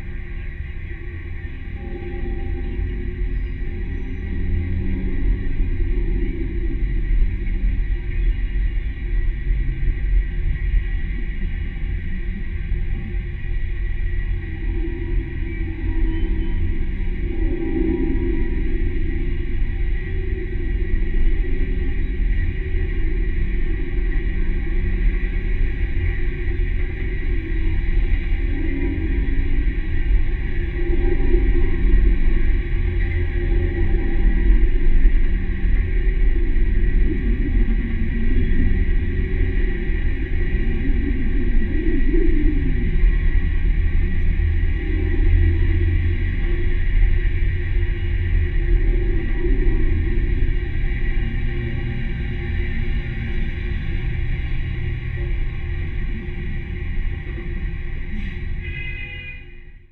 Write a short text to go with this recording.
tram pole at an abandoned terminus. recorded with contact mic.